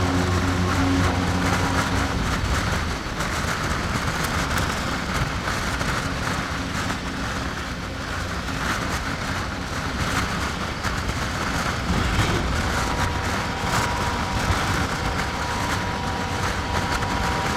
07.01.2009 22:40 the regular ICE train had to be replacesd because of frozen breaks. the replacement train was an old one from the 80s, which you don't see very often nowadays. it has doors and windows which you can or have to open by hand. here are some charateristic sounds of this kind of train.